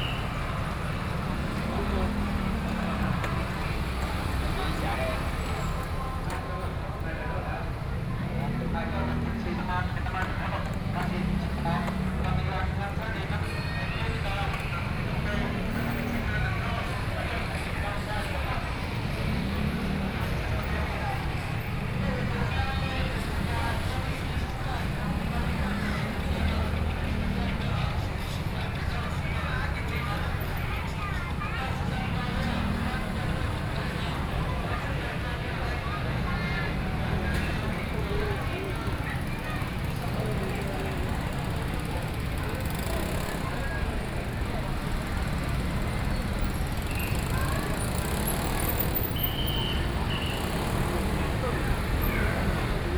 Shenghou St., 宜蘭市東門里 - Festival
Road corner, Festival, Traffic Sound
Sony PCM D50+ Soundman OKM II